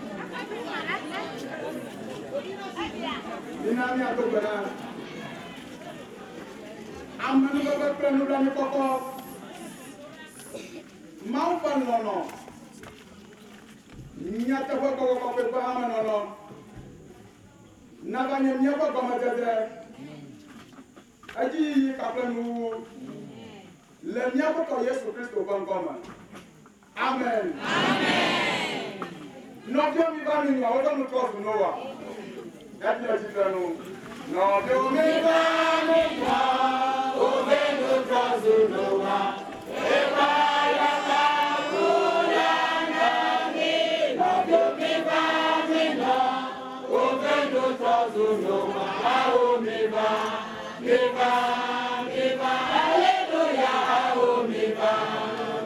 Togbe Tawiah St, Ho, Ghana - church of ARS beginning of service
It was just after darkness fell we arrived at the church. Service was in the open air and a big fire was lightning the place. There we portrets of Wovenu and a small amplifier with microphone.